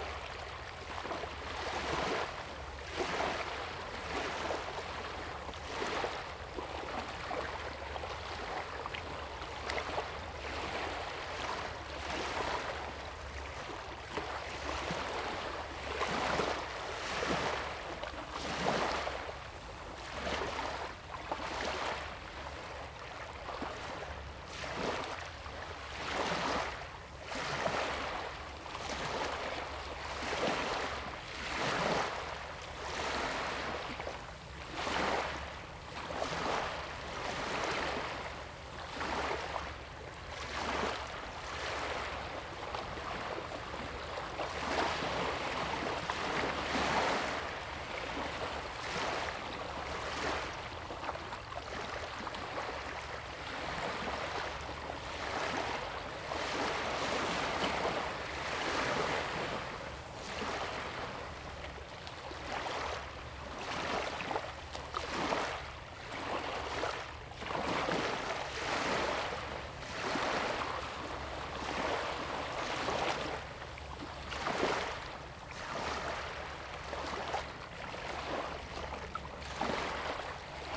沙港村, Huxi Township - Tide and Wave
At the beach, Sound of the waves
Zoom H2n MS+XY
Penghu County, Huxi Township, 22 October 2014, ~08:00